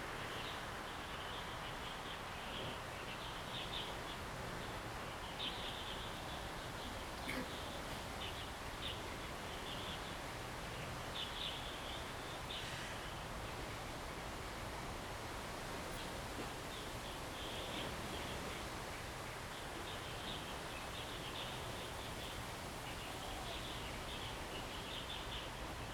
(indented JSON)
{
  "title": "Jinhu Township, Kinmen County - Birds singing",
  "date": "2014-11-03 07:10:00",
  "description": "Stream flow sound, Birds singing, wind\nZoom H2n MS+XY",
  "latitude": "24.46",
  "longitude": "118.30",
  "altitude": "7",
  "timezone": "Asia/Taipei"
}